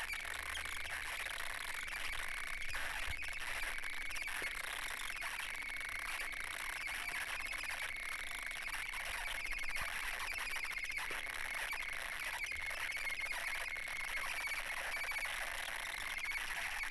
Poznan, Mateckiego Street, bathroom - attached plunger
a drain plunger sucked to the shower tray, removing it very slowly